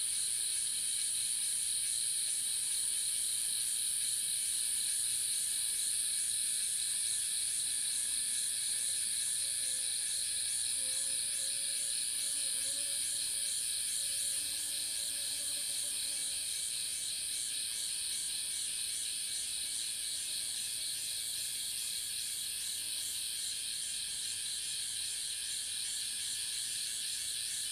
油茶園, 魚池鄉五城村 - Underbrush
Insect sounds, Underbrush, Cicada sounds
Zoom H2n MS+XY